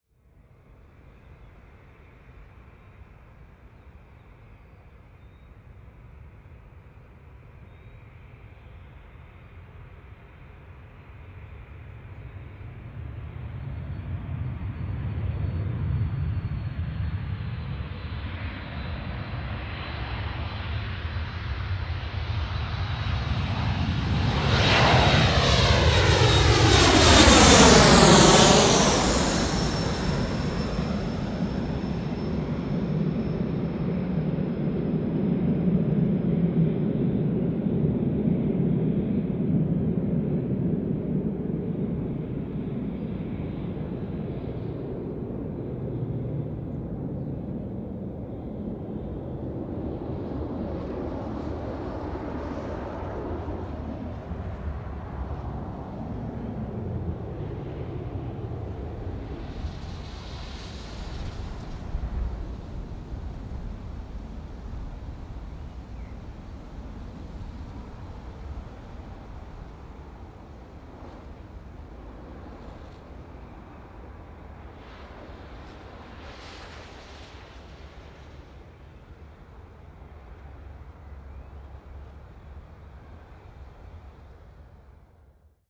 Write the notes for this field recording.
Tegel Airport, Berlin - wake vortex after airplane flyover. Recorded at the Western end of Tegel Airport - where the airplanes fly over landing. The interesting part starts at 00'50: after the flyover you can hear the sound of the wake vortex - or wing tip vortex - which is a trail of turbulent air following the airplane. The wake vortex creates a very subtle swish and rustle that pervades the whole area. It is not always so articulate and continuous as it was this time. [I used the Hi-MD-recorder Sony MZ-NH900 with external microphone Beyerdynamic MCE 82 with windshield and fur]